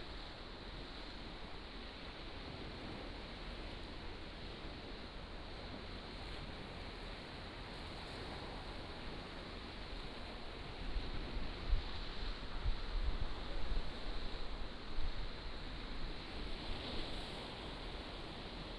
橋仔村, Beigan Township - sound of the waves
Sound of the waves, Small fishing village
福建省, Mainland - Taiwan Border, October 2014